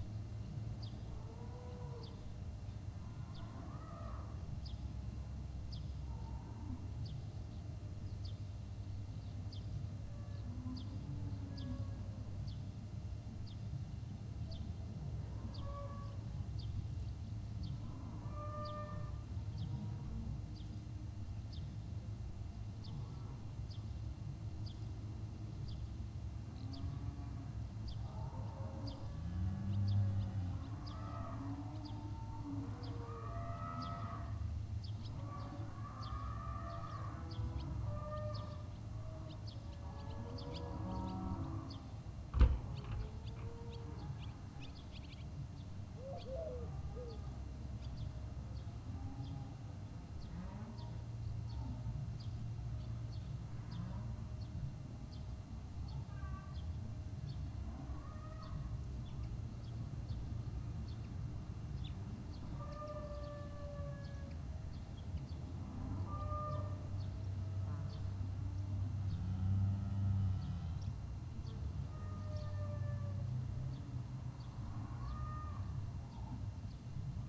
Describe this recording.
Wlodzimierz Puchalski was a polish pioneer of nature photography and popular science and nature films. Recording was made in front of his summer house in the village Morusy (Northeastern Poland) where he made several films about the animals of Narew and Biebrza rivers.